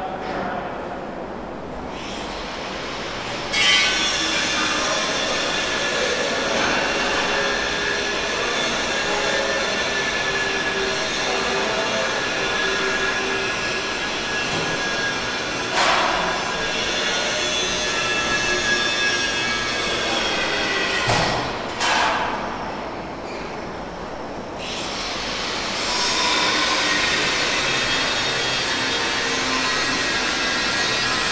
{"title": "University of Colorado Boulder, Regent Drive, Boulder, CO, USA - Metal shop", "date": "2013-02-14 04:27:00", "description": "Standing outside the Metal Shop", "latitude": "40.01", "longitude": "-105.27", "altitude": "1651", "timezone": "America/Denver"}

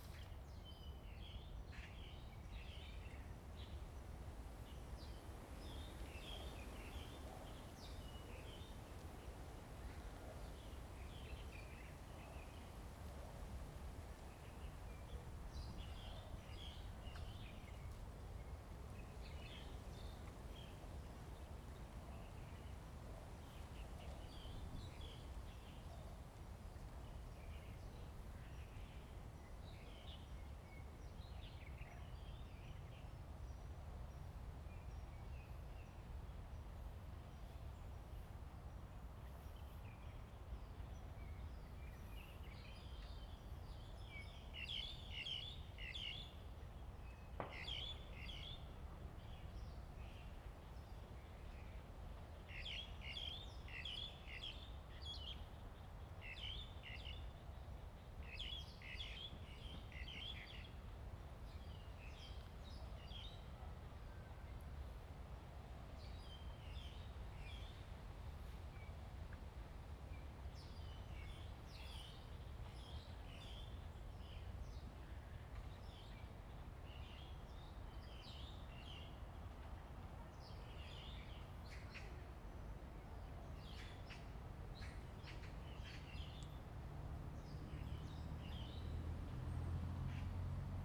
{"title": "榕園, Jinhu Township - in the Park", "date": "2014-11-04 16:21:00", "description": "Birds singing, Wind, In the woods\nZoom H2n MS+XY", "latitude": "24.44", "longitude": "118.43", "altitude": "28", "timezone": "Asia/Taipei"}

